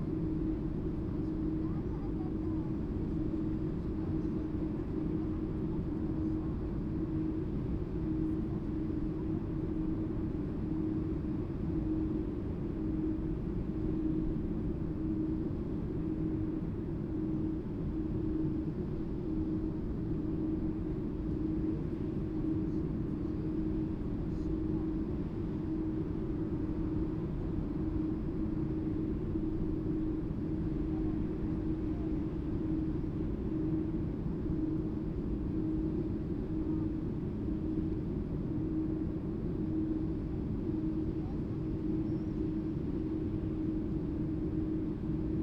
Independence Place, Minsk, Belarus, air conditioning
air conditioning system of the underground supermarket